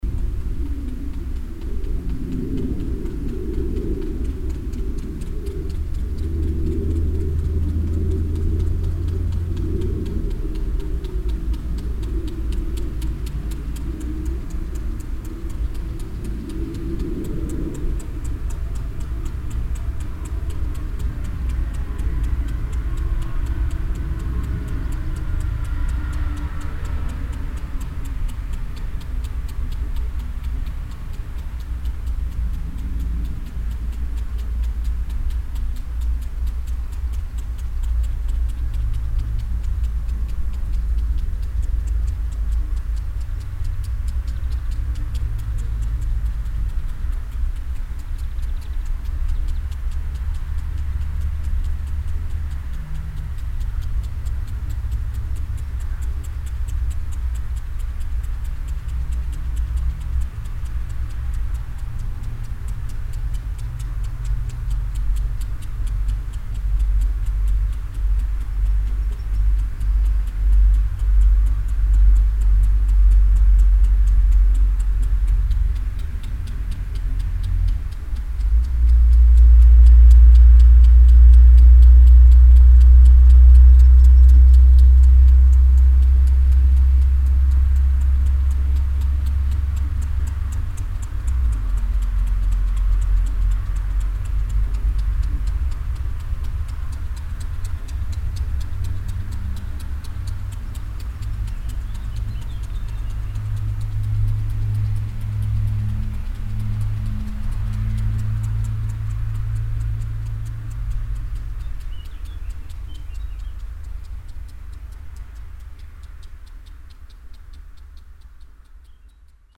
troisvierges, sport place, automatic water sprinkler
On the empty sport place in the afternoon on a hot, windy summer day. The sound of two automatic water sprinkler that move parallel on the grass surface. In the background the noise of the nearby road.
Troisvierges, Sportplatz, automatische Sprinkleranlage
Auf einem leeren Sportplatz am Nachmittag an einem heißen und windigen Sommertag. Das Geräusch von zwei automatischen Sprinkleranlagen, die sich parallel zur Grasoberfläche bewegen. Im Hintergrund der Lärm der nahen Straße.
Troisvierges, terrain de sport, système d'arrosage automatique
Sur le terrain de sport vide, l’après-midi d’une chaude journée d’été venteuse. Le bruit de deux systèmes d’arrosage automatique qui avancent en parallèle sur le gazon. Dans le fond, le bruit d’une route proche.
Projekt - Klangraum Our - topographic field recordings, sound objects and social ambiences